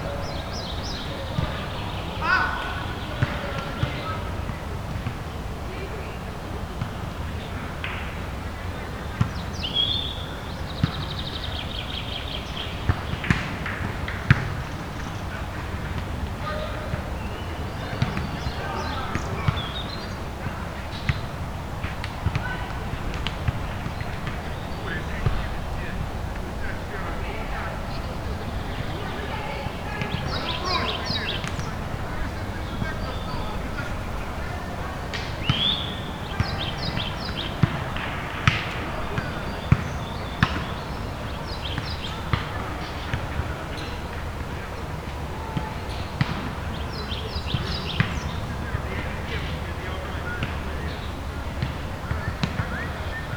Moscow, Tsaritsino - Evening in the park
Park, Volleyball, Birds
Moscow, Russia, 19 May 2011, 18:15